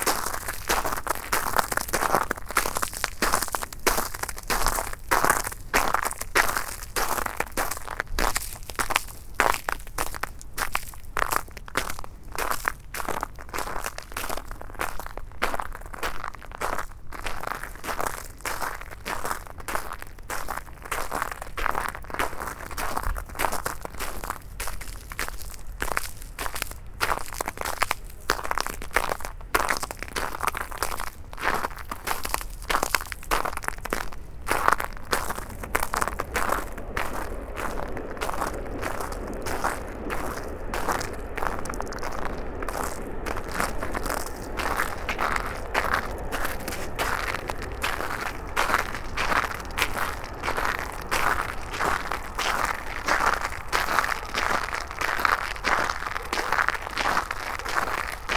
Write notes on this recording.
Walking in the black ice, inside the Hayeffes school. It's particularly frozen and dangerous in this village where slopes are everywhere !